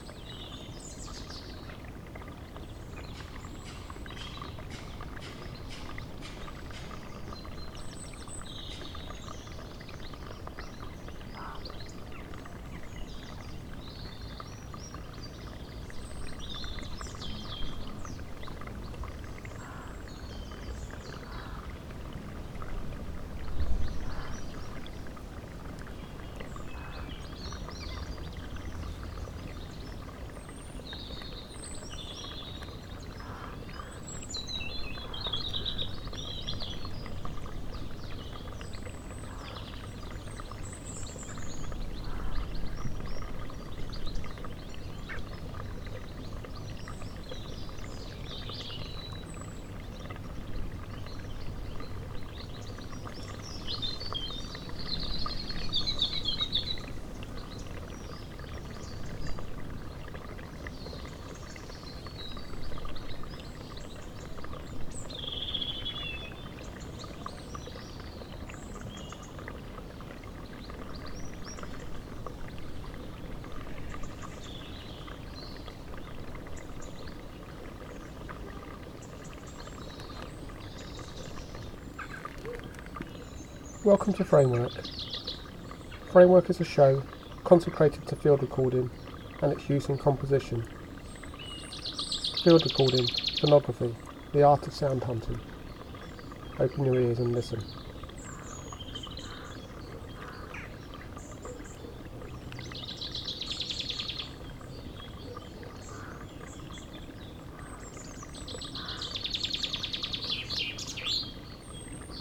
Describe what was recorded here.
outside Nottington Church mid day early spring